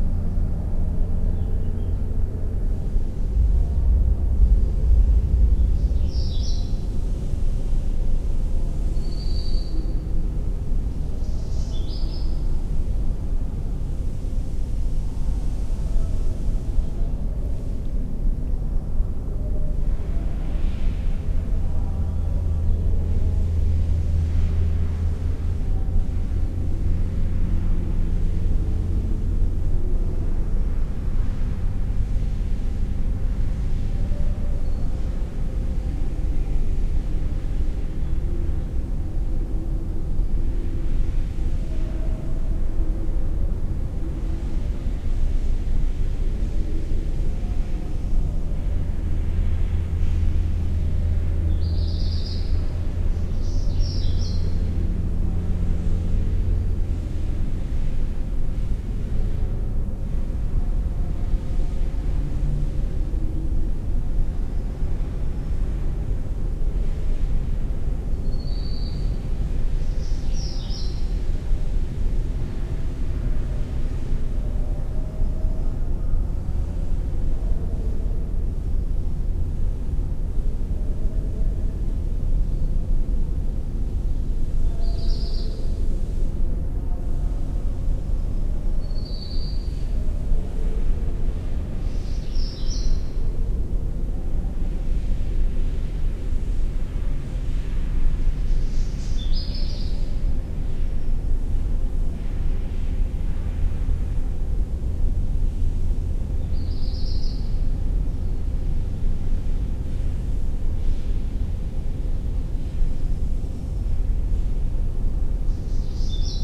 Kidricevo, Slovenia - disused factory resonance
this factory building is slowly being dismantled, but in the meantime a performance group is rehearsing a new theater piece in it. this however is recorded from far, far (hundreds of meters) down one passageway, in the heart of the building, with sounds of the rehearsal, the rest of the factory complex, and the world outside resonating in the air.
18 June 2012, 19:20